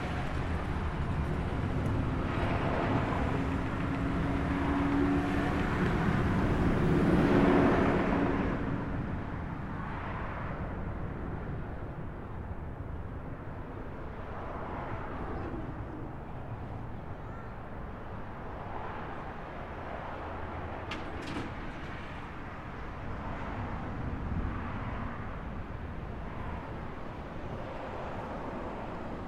Lane Motor Museum, Nashville, Tennessee, USA - Lane Motor Museum
Outside Lane Motor Museum
Tennessee, United States, 14 March 2022